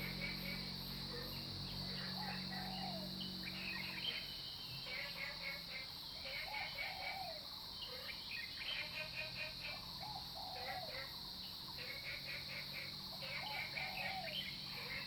Zhonggua Rd., 桃米里 Puli Township - Early morning
Early morning, Frogs sound, Bird calls, Aircraft flying through, Bird calls
Zoom H2n MS+XY
10 June 2015, 05:44